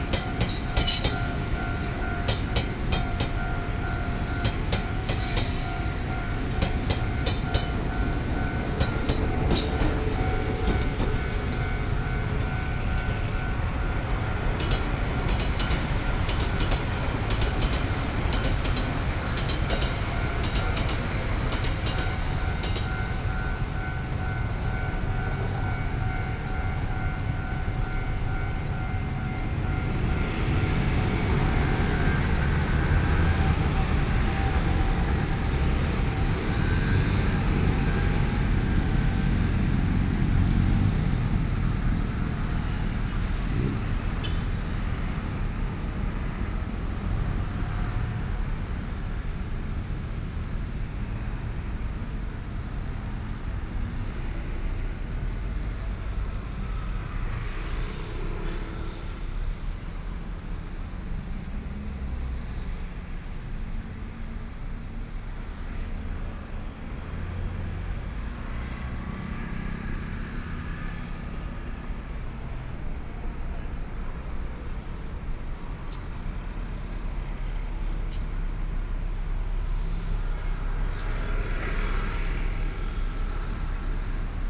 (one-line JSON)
{"title": "Sec., Zhongshan Rd., 羅東鎮東安里 - Road corner", "date": "2014-07-27 18:50:00", "description": "Beside railroad tracks, Traffic Sound, In the railway level crossing, Trains traveling through", "latitude": "24.68", "longitude": "121.77", "altitude": "12", "timezone": "Asia/Taipei"}